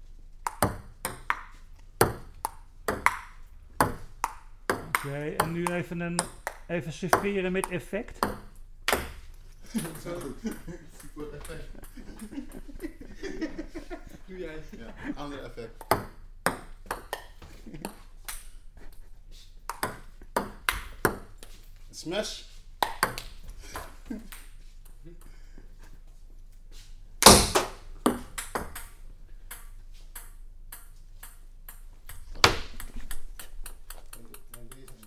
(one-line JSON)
{"title": "ping pong spelen", "date": "2011-09-09 16:15:00", "description": "ping pong spel\nplaying ping pong in the youth centre", "latitude": "52.15", "longitude": "4.45", "timezone": "Europe/Amsterdam"}